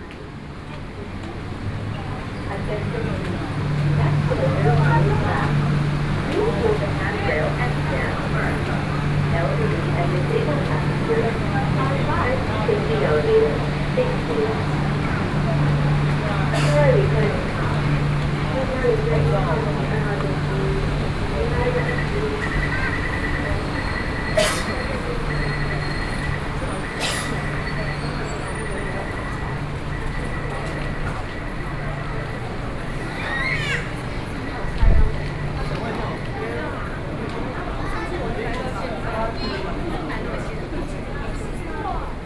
Zhongxiao Fuxing Station, Taipei city - soundwalk
soundwalk in the Zhongxiao Fuxing Station, Sony PCM D50 + Soundman OKM II
台北市 (Taipei City), 中華民國, 10 September 2013, ~16:00